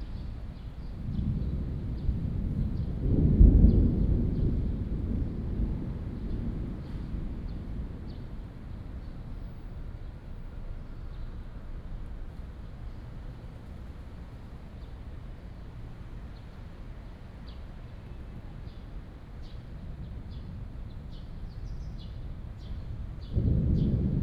敦安公園, Da’an Dist., Taipei City - in the Park
Thunder, Upcoming rain